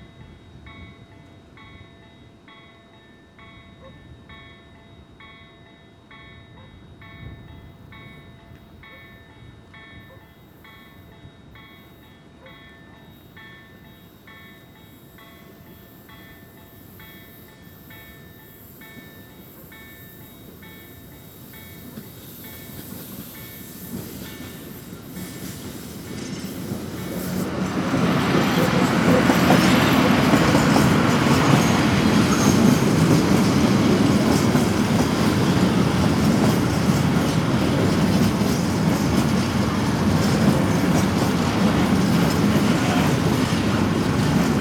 Poznan, city limits, close to Morasko Campus - fright train
a very long fright trains passing just in front of the mics. every passing car has slightly different characteristic of its rumble and wailing.